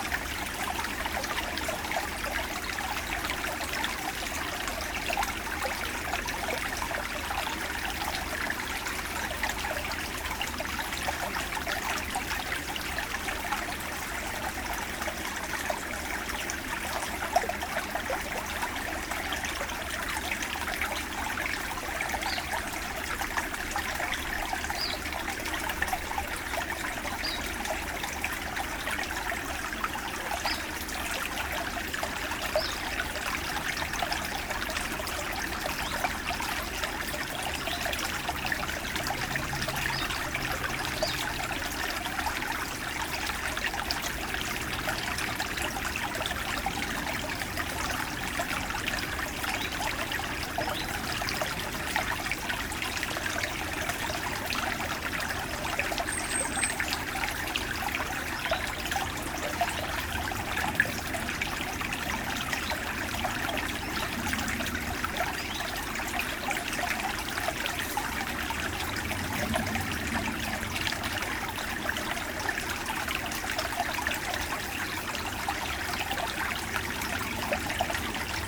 Chaumont-Gistoux, Belgique - The Train river
The Train river, a small stream inside the woods, and sometimes, a distant dog barking.